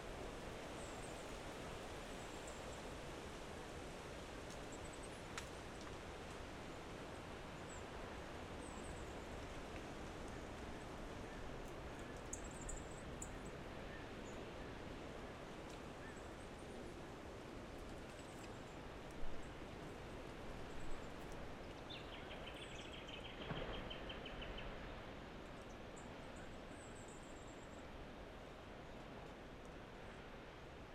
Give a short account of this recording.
Packing away my gear, making sure every thing was set-up right and also being bit by a large march fly. Recorded with an AT BP4025 into a Tascam Dr-680. BixPower MP100 was used as an external battery, it still had about half it's battery life left when I picked it up the next morning.